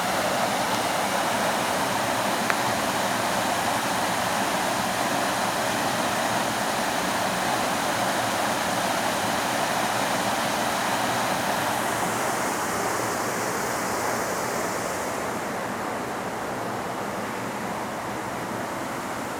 Pavia, Italy - the Mill
Old Mill, small barking dogs at the house in front of the channel
Province of Pavia, Italy, 20 October 2012